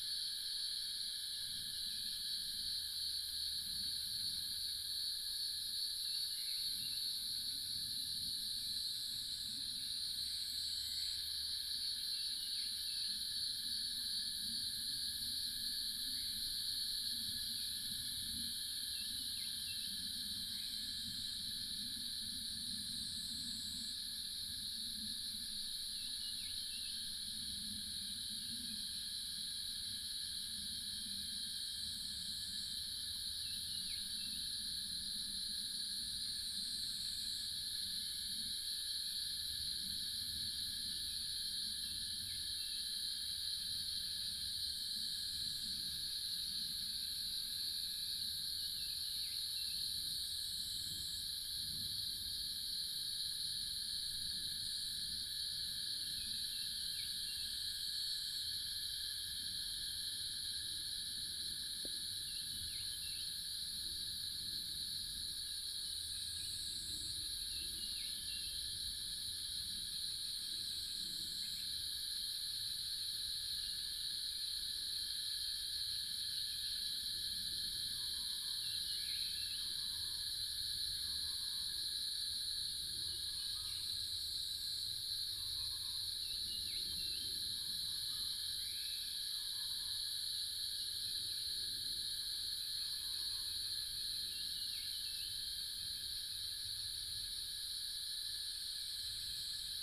油茶園, 魚池鄉五城村, Nantou County - Birds and Cicada sounds
early morning, Birds and Cicada sounds
Nantou County, Yuchi Township, 華龍巷43號, 8 June 2016